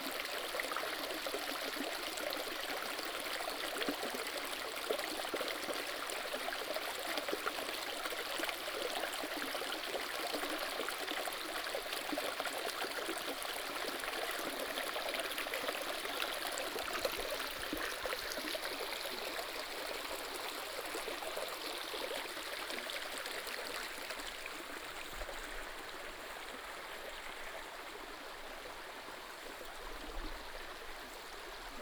成功里, 埔里鎮, Nantou County - Stream sound
Walking along the river
Nantou County, Taiwan, 20 April